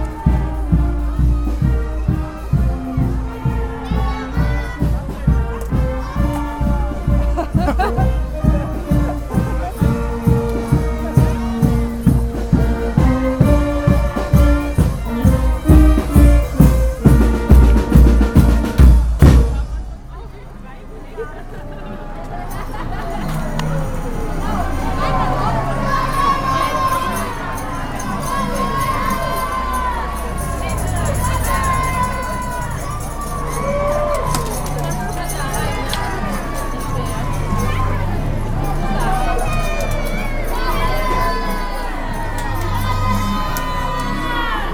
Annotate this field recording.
Carnivals parade in Cologne-Nippes with the carnival associations and the schools of the quarter: a parade of floats and marching bands, people lining the streets shouting for "kammelle" (sweets) and "strüssjer" (flowers), that are thrown from the floats.